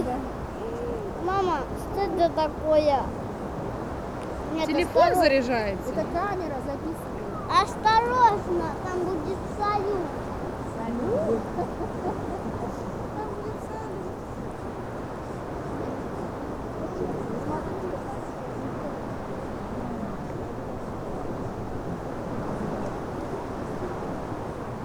just 7 minuts on the Jurmala beach in september
12 September, 15:40